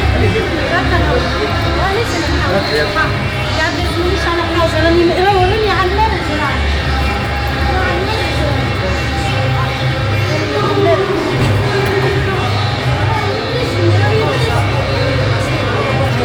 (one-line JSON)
{"title": "Le Passage, Tunis, Tunesien - tunis, rue lenine, arabic soundsystem", "date": "2012-05-02 16:00:00", "description": "Standing on the Street in the afternoon. Listening to the sound of an arabic soundsystem coming from the first floor of a house across the small street. The sound of arabic scratched party dance music spreaded in the street.\ninternational city scapes - topographic field recordings and social ambiences", "latitude": "36.80", "longitude": "10.18", "altitude": "9", "timezone": "Africa/Tunis"}